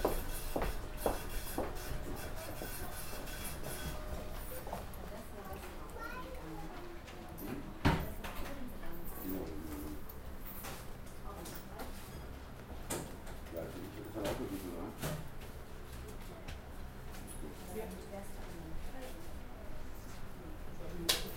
recorded june 4, 2008 - project: "hasenbrot - a private sound diary"
cologne